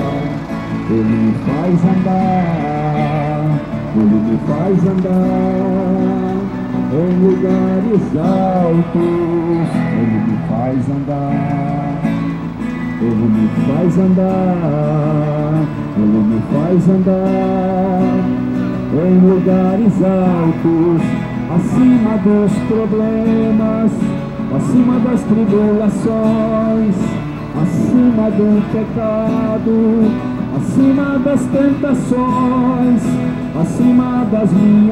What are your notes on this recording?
Panorama sonoro: dupla de evangelizadores, sábado à tarde, no Calçadão nas proximidades da Praça Marechal Floriano Peixoto. Enquanto um músico tocava e cantava músicas de louvor, outro distribuía panfletos e abençoava pedestres. O músico utilizava violão e um microfone conectados a uma caixa de som. Algumas pessoas se sentavam próximas à dupla e cantavam junto com os evangelizadores. A pair of evangelizers, Saturday afternoon, on the boardwalk near the Marechal Floriano Peixoto Square. While one musician played and sang songs of praise, another distributed pamphlets and blessed pedestrians. The musician used a guitar and a microphone connected to a sound box. Some people sat next to the pair and sang together with the evangelizers.